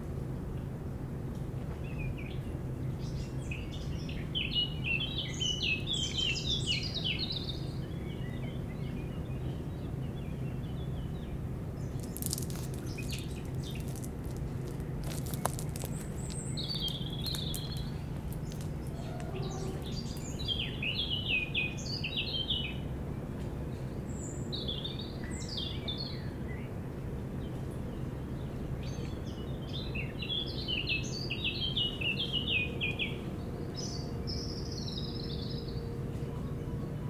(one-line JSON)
{"title": "Saint-Pierre-de-Curtille, France - Rivage", "date": "2019-06-16 16:15:00", "description": "Sur le rivage de la côte sauvage du lac du Bourget, fauvette, rouge-gorge, bateaux, bruits de circulation sur la rive Est, trains....", "latitude": "45.75", "longitude": "5.84", "altitude": "255", "timezone": "Europe/Paris"}